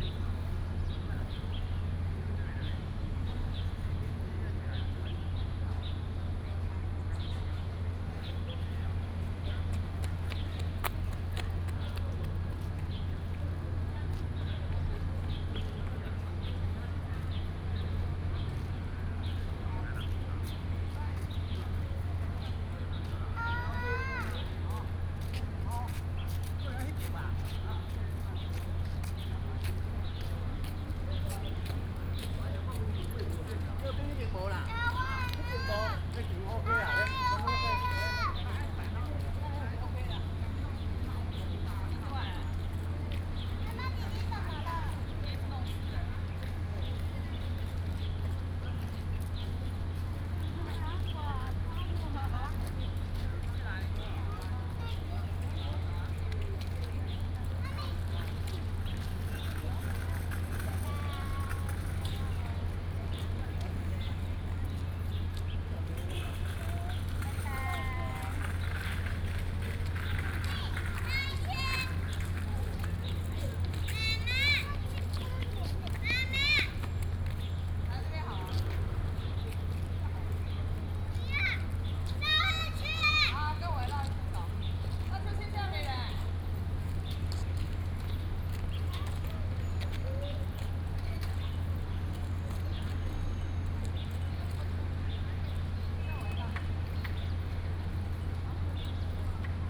嘉興公園, Da’an Dist., Taipei City - in the Park
in the Park, Mother and child, Bird calls, This park is rebuilding